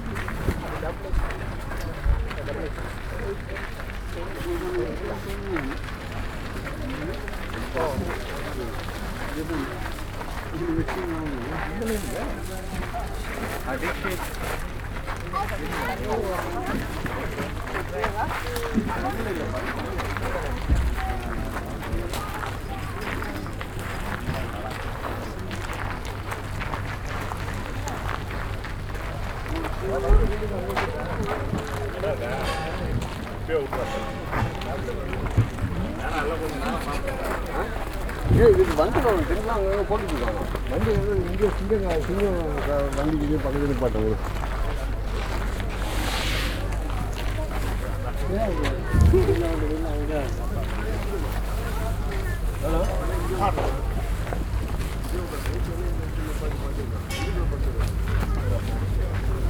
parking lot next to the temple, Hamm, Germany - Strolling the festival bazar
Strolling among the stalls of the bazar. Still a lot of setting up going on in and around the stalls. Sounds of the bazar are mixing with the sounds of ongoing prayers and offerings from inside the temple. Day before the main temple fest.
Nordrhein-Westfalen, Deutschland, 25 June 2022, 18:15